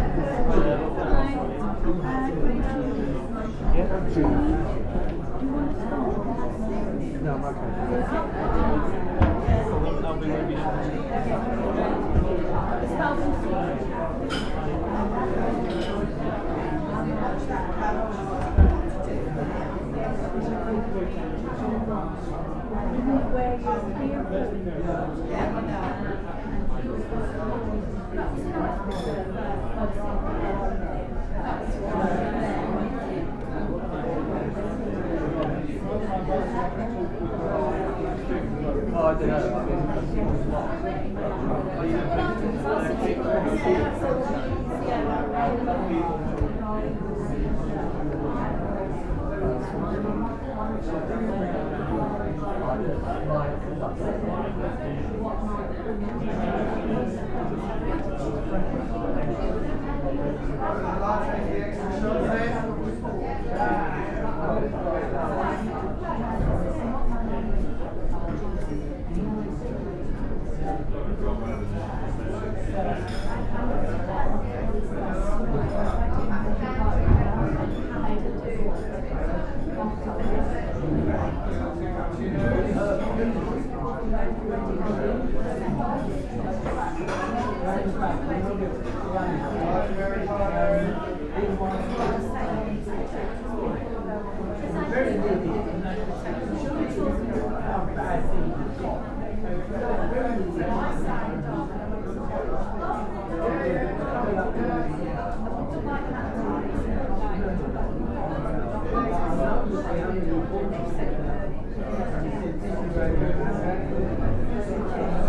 A crowd of pensioners, like me, in the cafe on Southwold pier. My mics are covered in a fake fur windshield mistaken by one indignant lady as a dog in my bag. We all laughed about it. MixPre 3 with 2 x Bayer Lavaliers.